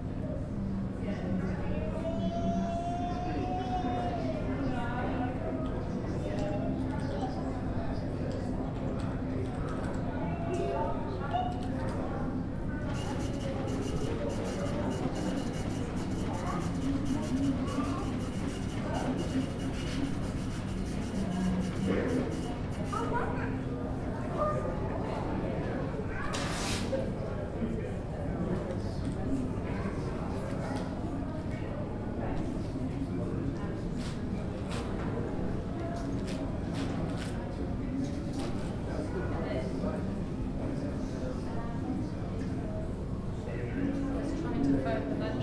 neoscenes: Auckland Intl Airport Gate 1